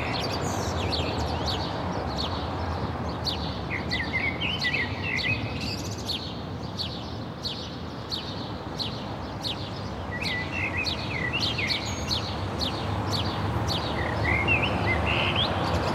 Reuterstrasse: Balcony Recordings of Public Actions - A quiet Thursday morning
The noise of cars IS more striking these days. What a quiet Thursday morning on the balcony.
Sony PCM D-100